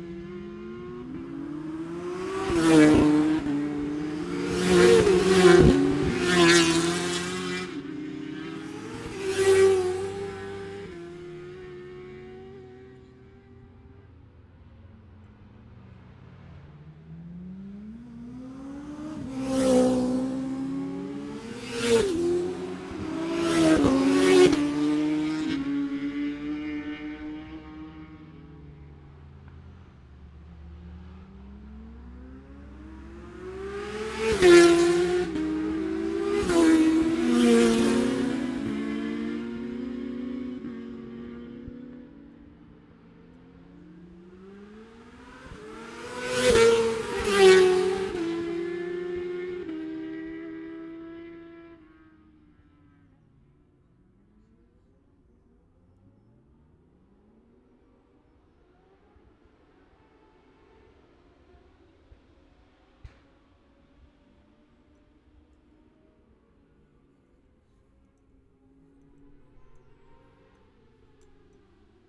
Scarborough, UK - motorcycle road racing 2017 ... 1000cc ...
1000cc practice ... even numbers ... Bob Smith Spring Cup ... Olivers Mount ... Scarborough ... open lavalier mics clipped to sandwich box ...
22 April 2017